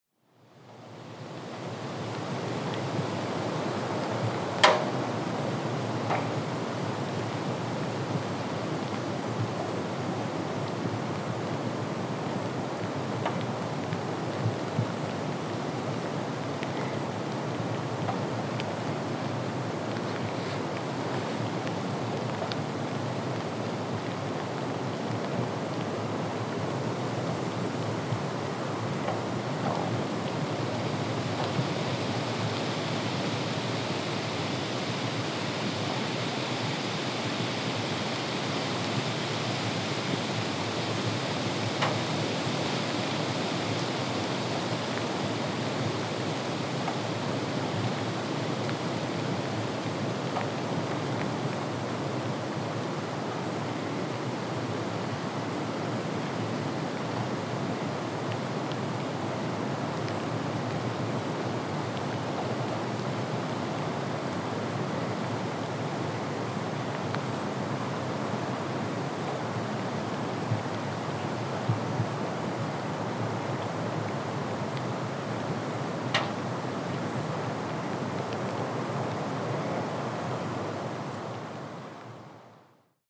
{"title": "Neringos sav., Lithuania - Night Forest Near the Lighthouse", "date": "2016-08-03 03:37:00", "description": "Recordist: Saso Puckovski\nDescription: Silent night in the forest close to the lighthouse. Wind, rain drops and nocturnal insects. Recorded with ZOOM H2N Handy Recorder.", "latitude": "55.30", "longitude": "21.00", "altitude": "47", "timezone": "Europe/Vilnius"}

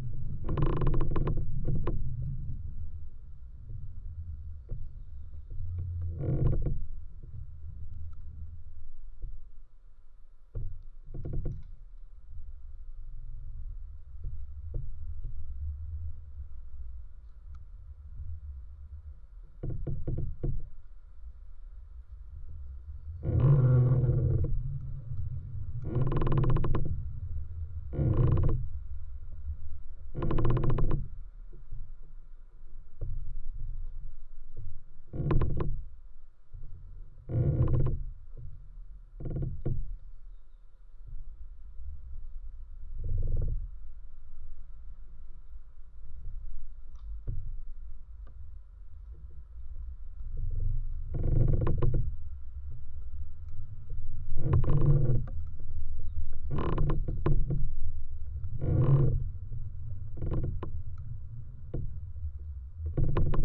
{"title": "Antakalnis, Lithuania, creaking tree", "date": "2020-05-31 15:10:00", "description": "inner processes in a creaking tree. contact mics and geophone for low end.", "latitude": "54.50", "longitude": "24.72", "altitude": "156", "timezone": "Europe/Vilnius"}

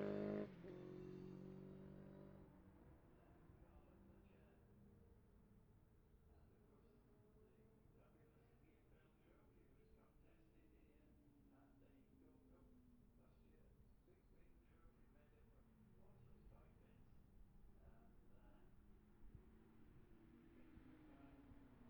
{"title": "Jacksons Ln, Scarborough, UK - olivers mount road racing 2021 ...", "date": "2021-05-22 10:50:00", "description": "bob smith spring cup ... olympus LS 14 integral mics ... running in some sort of sync with the other recordings ... from F2 sidecars to classic superbikes practices ... an extended ... time edited recording ...", "latitude": "54.27", "longitude": "-0.41", "altitude": "144", "timezone": "Europe/London"}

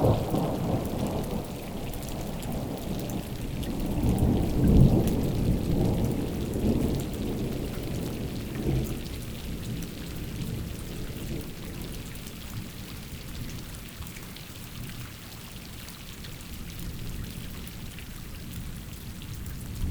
{"title": "Garnarich, Arménie - Storm", "date": "2018-09-10 17:00:00", "description": "A strong storm on the very poor village of Garnarich. We wait below a small bridge and near a small river. We are wet and cold.", "latitude": "41.08", "longitude": "43.61", "altitude": "2034", "timezone": "Asia/Yerevan"}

